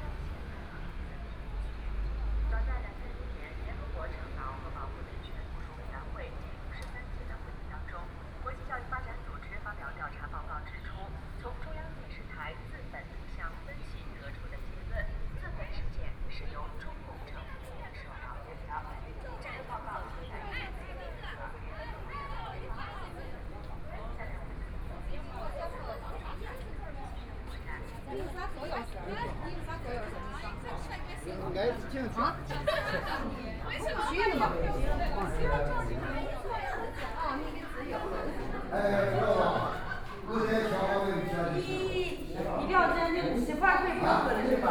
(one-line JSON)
{"title": "Dragon and Tiger Pagodas, Zuoying District - walking", "date": "2014-05-15 11:21:00", "description": "walking in the Dragon and Tiger Pagodas, Chinese tourists, Traffic Sound, The weather is very hot", "latitude": "22.68", "longitude": "120.29", "altitude": "9", "timezone": "Asia/Taipei"}